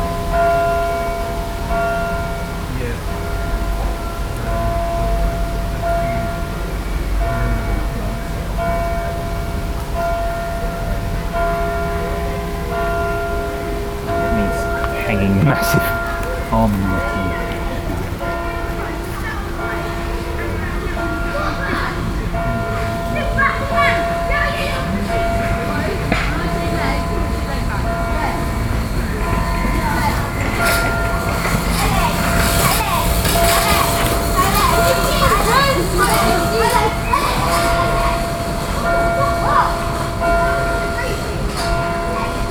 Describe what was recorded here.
Distant bells from the cathedral just across the river and noisy children on bikes. Recorded with a Mix Pre 3 and 2 beyer lavaliers.